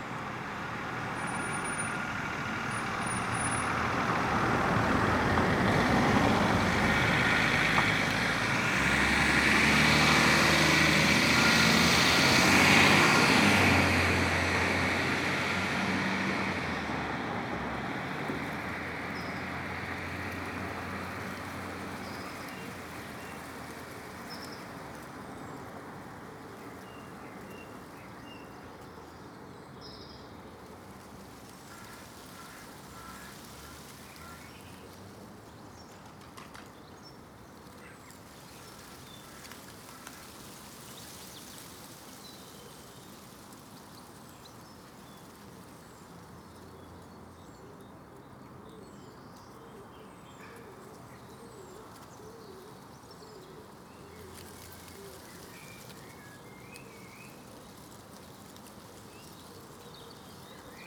Contención Island Day 54 inner west - Walking to the sounds of Contención Island Day 54 Saturday February 27th
The Poplars High Street Graham Park Road
A heraldic turn
atop gateposts
lions hold shields
A once gateway bricked back into a wall
In a Range Rover
white hair uncombed
eyes staring
A woodpecker drums on a chimney
a thrush limbers up for spring
England, United Kingdom, 27 February